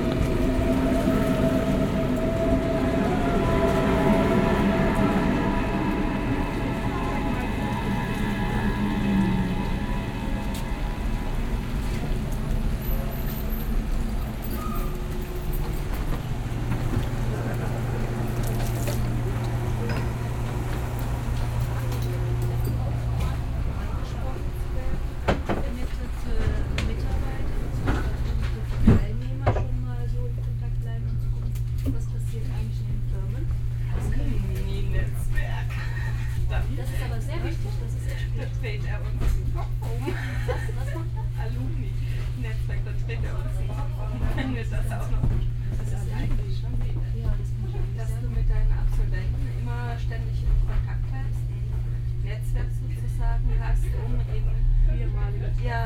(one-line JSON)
{"title": "siegburg, bahnhof im regen - märz 2006 (binaural recording)", "date": "2006-03-10 17:10:00", "latitude": "50.79", "longitude": "7.20", "altitude": "59", "timezone": "Europe/Berlin"}